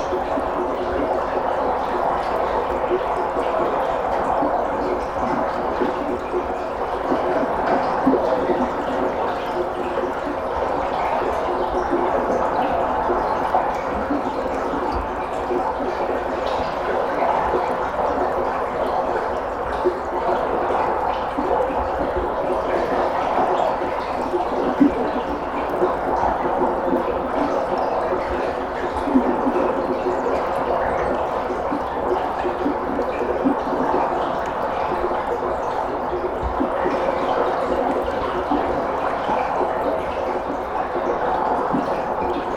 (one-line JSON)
{"title": "Morasko, Polarna road - concrete trench", "date": "2016-03-16 10:28:00", "description": "water flow recorded at an outlet of a big pipe. it's the first time i saw water coming out ot it. thought it was remains of an inactive grid. (sony d50)", "latitude": "52.48", "longitude": "16.90", "altitude": "116", "timezone": "Europe/Warsaw"}